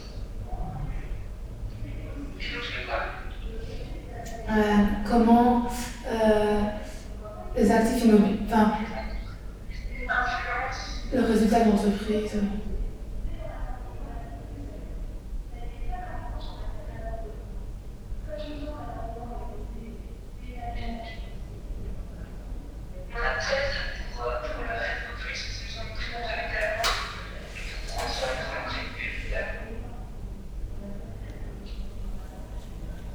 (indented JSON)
{
  "title": "Quartier des Bruyères, Ottignies-Louvain-la-Neuve, Belgique - I didn't make my homeworks",
  "date": "2016-03-11 16:40:00",
  "description": "A girl discussing in a corridor, with a skype communication, because she didn't do her homeworks.",
  "latitude": "50.67",
  "longitude": "4.61",
  "altitude": "117",
  "timezone": "Europe/Brussels"
}